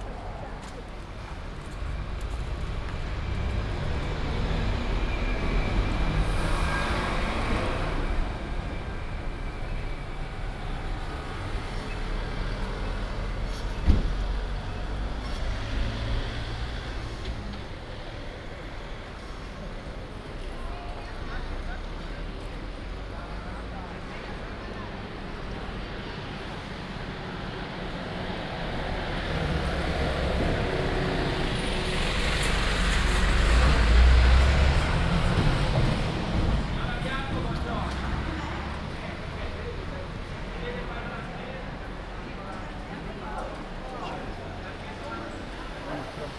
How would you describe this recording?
“Monday May 18th walk at noon in the time of covid19” Soundwalk, Chapter LXXX of Ascolto il tuo cuore, città. I listen to your heart, city. Monday May 18th 2020. Walk all around San Salvario district, Turin, sixty nine days after (but day fifteen of Phase II and day I of Phase IIB) of emergency disposition due to the epidemic of COVID19. Start at 11:50 a.m., end at h. 00:36 p.m. duration of recording 45’47”, The entire path is associated with a synchronized GPS track recorded in the (kml, gpx, kmz) files downloadable here: